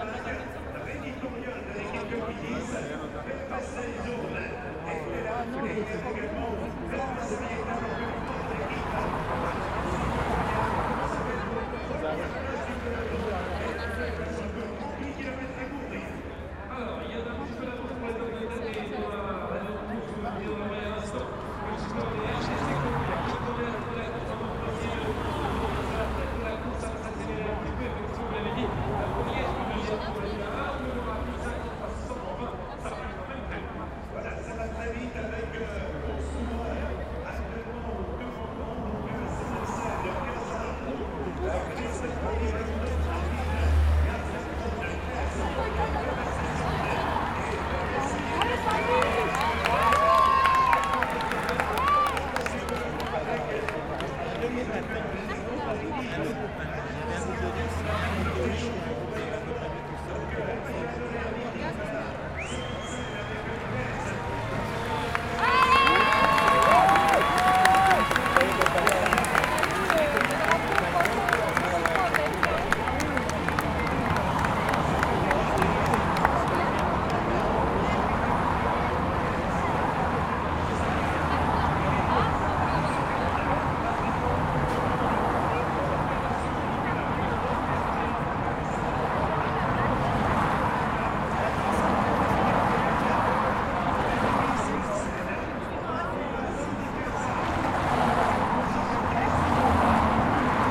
Champs Elysées, Paris - Le Tour/Tour de France 2010, Tour de France, Final Lap, Champs Elysées, Pari
Crowd, commentary, support vehicles, cyclists, on the final lap of the Tour de France 2010, Champs Elysées, Paris.